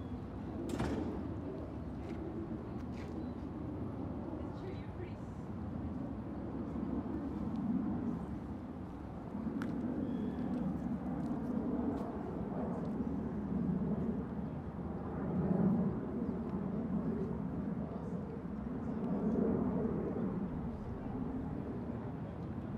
{"title": "Greenlake Park, Seattle Washington", "date": "2010-07-18 12:45:00", "description": "Part four of a soundwalk on July 18th, 2010 for World Listening Day in Greenlake Park in Seattle Washington.", "latitude": "47.67", "longitude": "-122.34", "altitude": "52", "timezone": "America/Los_Angeles"}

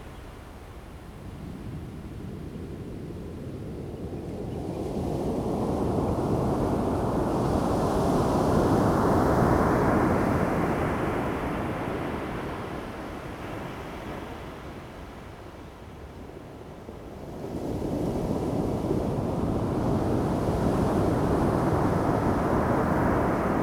{"title": "太麻里海岸, Taitung County, Taiwan - on the beach", "date": "2018-04-03 17:11:00", "description": "Sound of the waves, on the beach\nZoom H2n MS+XY", "latitude": "22.61", "longitude": "121.01", "altitude": "3", "timezone": "Asia/Taipei"}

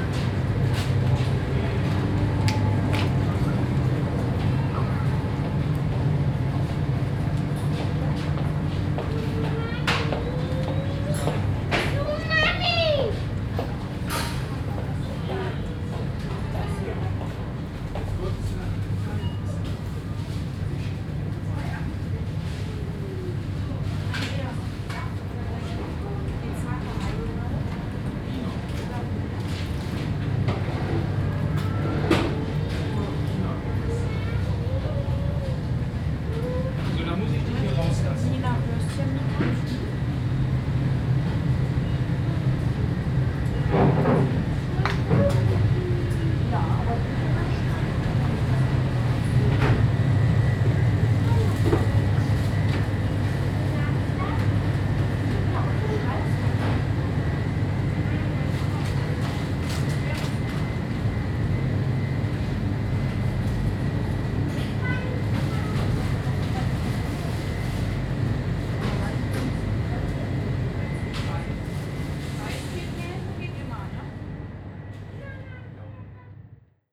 {"title": "Südviertel, Essen, Deutschland - essen, rüttenscheider str, bio supermarket", "date": "2014-04-26 11:40:00", "description": "In einem Bio Supermarkt. Der Klang von Einkaufswagen, die Stimmen von Menschen, das Piepen der Kasse und das Brummen der Kühlboxen.\nInside a bio supermarket. The sound of shopping carts, voices, the beep of the cash register and the seep hum of the refrigerators.\nProjekt - Stadtklang//: Hörorte - topographic field recordings and social ambiences", "latitude": "51.44", "longitude": "7.01", "altitude": "115", "timezone": "Europe/Berlin"}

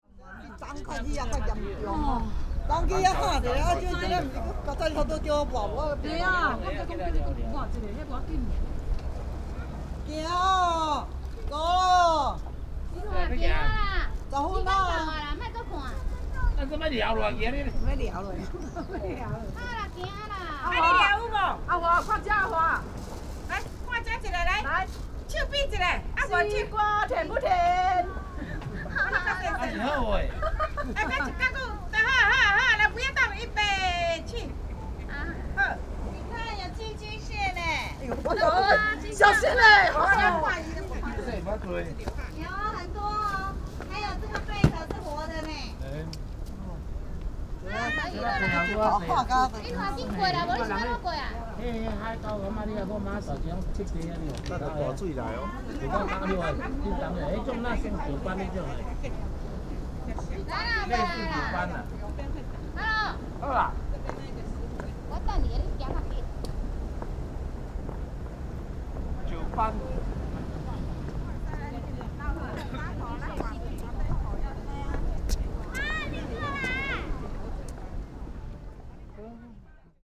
{"title": "tuman, Keelung - Pictures to commemorate", "date": "2012-06-24 13:09:00", "description": "Middle-aged tourists, Pictures to commemorate, Train message broadcasting, Binaural recordings", "latitude": "25.16", "longitude": "121.77", "altitude": "1", "timezone": "Asia/Taipei"}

